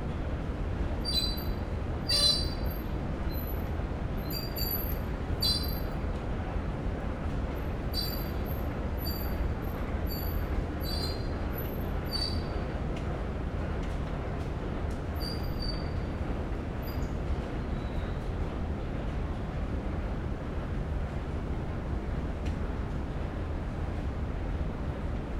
neoscenes: Melbourne Central, Track 1
Melbourne VIC, Australia, 30 May 2011